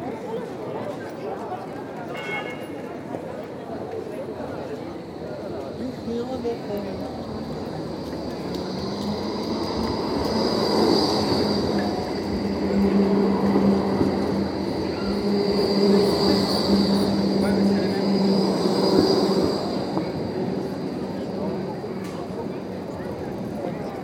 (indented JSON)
{
  "title": "Gent, België - Old center of Ghent",
  "date": "2019-02-16 17:00:00",
  "description": "Very festive atmosphere, from Korenmarkt, Grasbrug and Korenlei. Near Graslei, many people are seated along the canal and for a short while, one could consider that they practice the Danish hygge. Making our way along these cobblestones docks, we can understand the underestimate we feel towards Wallonia.\nsubsection from 0:00 à 9:00 Veldstraat, the main commercial street in Ghent. During an uninterrupted parade of trams, everyone makes their way through in a dense atmosphere. From 9:00 à 12:00 Girl scouts playing on Klein Turkije. From 12:00 à 19:12 A very festive atmosphere in the tourist heart of Ghent, from Korenmarkt to Groentenmarkt, Vleeshuisbrug and Gravensteen. Seller of cuberdons shouting and joking with everybody, hilarious customers, and constantly, trams having great difficulties to manage the curve. This is the representative atmosphere of Ghent, noisy, festive and welcoming. Note : it’s a pleasure to hear only dutch speaking people. In Brugge it was uncommon !",
  "latitude": "51.05",
  "longitude": "3.72",
  "altitude": "8",
  "timezone": "Europe/Brussels"
}